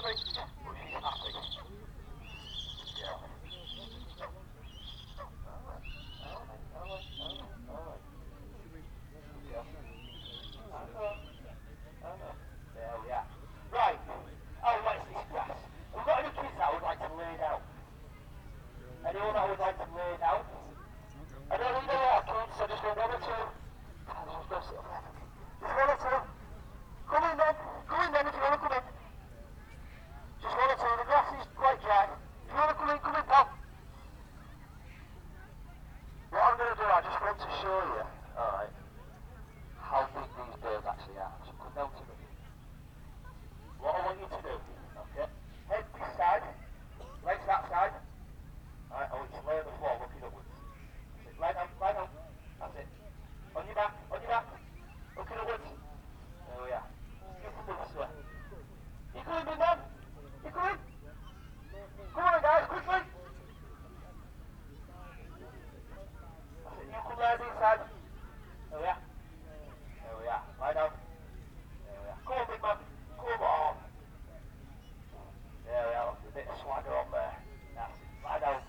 Burniston, UK - Falconry Display ... Burniston and District Show ...
Apollo the eagle owl ... falconer with radio mic through the PA system ... lavalier mics clipped to baseball cap ... warm sunny morning ...